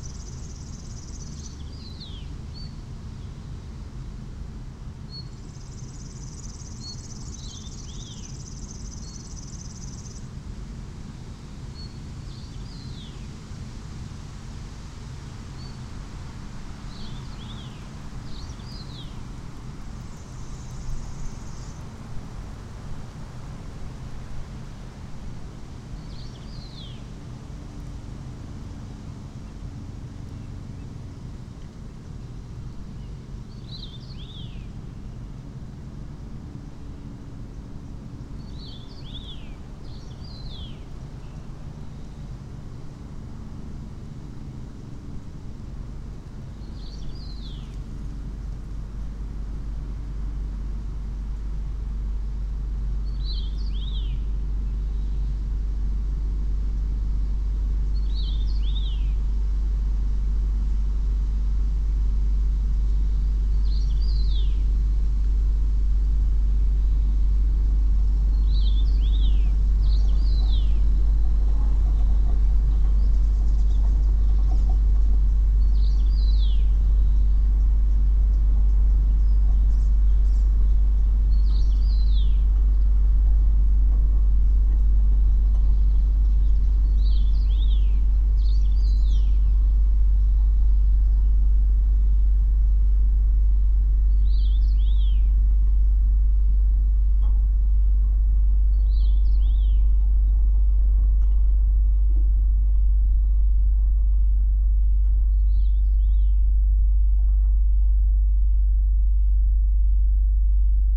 Utena, Lithuania, at electric substation
the place I always liked to capture. and finally it's here. good circumstances: very windy day blocks unwanted city's sounds. this is two part recording. the first part: I stand amongst the trees with conventional mics. the second part: contact mics and geophone is placed on metallic fence surrounding the electric substation. low frequencies throbbing everything...
Utenos rajono savivaldybė, Utenos apskritis, Lietuva